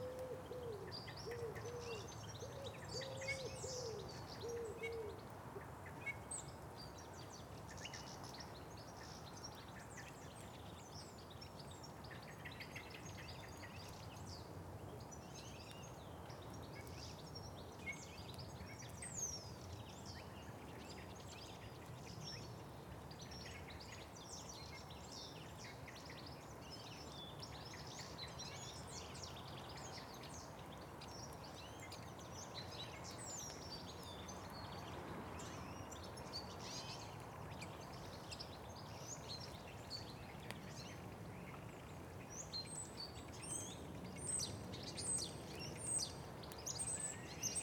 The Drive Westfield Drive Parker Avenue Elgy Road Elmfield Grove Wolsingham Road
Read names carved into headstones
walk to shelter under a yew tree
A grey wagtail bounces off across the graves
blackbirds appear on top of walls and scold each other
England, United Kingdom